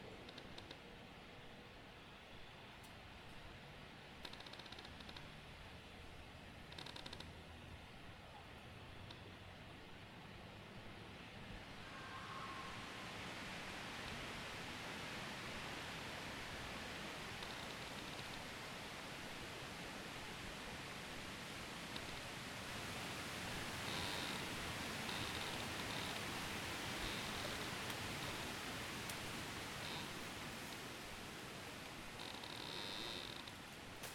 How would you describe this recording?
Sounds heard on the Tree Trail at Lost Bridge West State Recreation Area, Andrews, IN. Recorded using a Zoom H1n recorder. Part of an Indiana Arts in the Parks Soundscape workshop sponsored by the Indiana Arts Commission and the Indiana Department of Natural Resources.